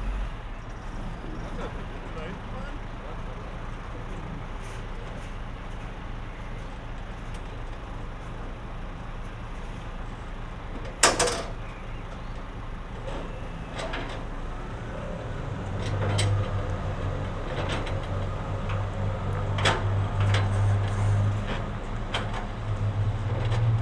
Am Treptower Park, Berlin - factory premises between S-Bahn tracks
self-driving mobile crane vehicle moves on a low-loader
(SD702, Audio technica BP4025)